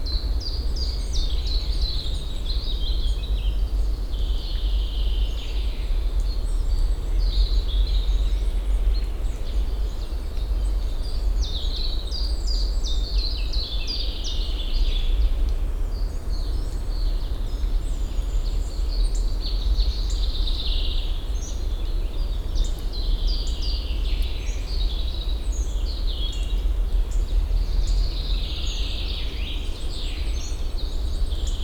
Morasko Nature Reserve, eastern border - wildboar kingdom
(bianarual) forest activity at the border of the Morasko Nature Reserve. all treas crackling as if there are releasing pockets of air. inevitable roar of various planes.